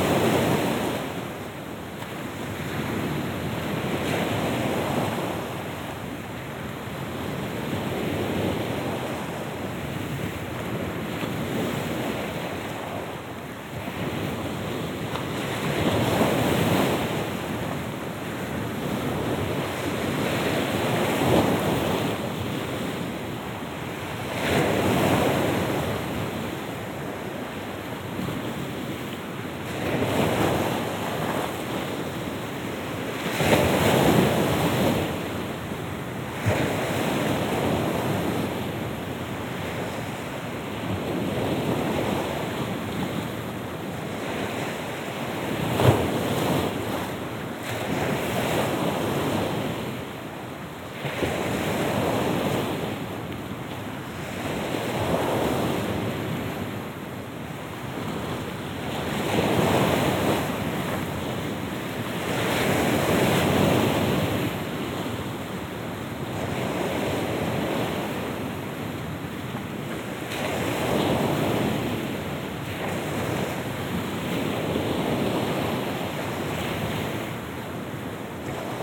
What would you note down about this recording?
Roll forward waves. Накат волны.